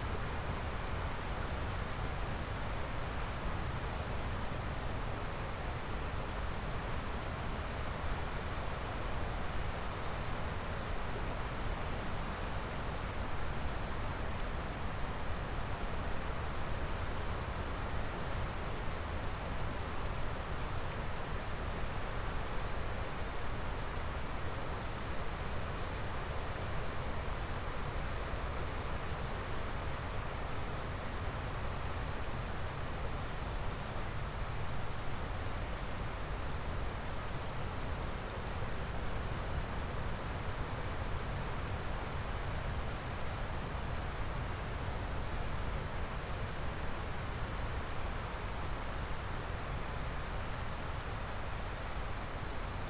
Artmaniskis, Lithuania, at ancient mound
newly discovered mound (the place where castle stood) at the Viesa river. windy day, drizzle.
Utenos apskritis, Lietuva, 2 February 2020